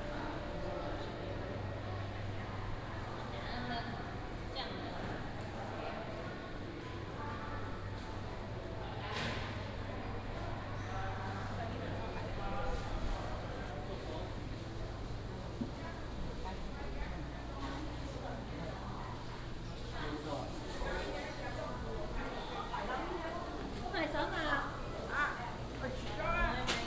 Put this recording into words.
Traditional market, Traffic sound, Morning in the area of the market, bird sound, Binaural recordings, Sony PCM D100+ Soundman OKM II